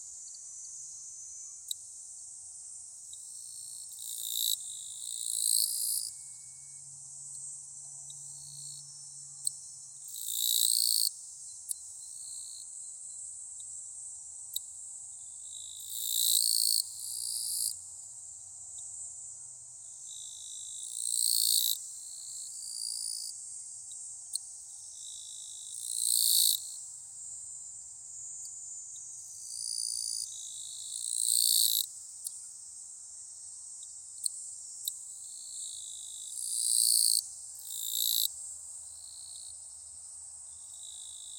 Mameda, Keisen, Kaho District, Fukuoka, Japan - Grasshoppers Seem to Enjoy a Humid Afternoon Together while Children Play in the Distance
They really did seem content.